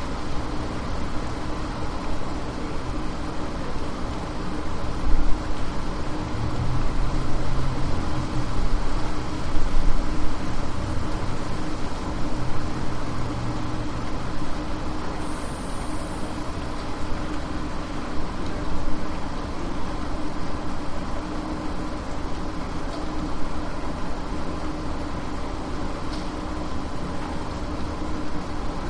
Boone, NC, USA - Outside Brick Stairwell
The sound of rain from the 3rd floor doorway of Coltrane Residence Hall with residents talking in the background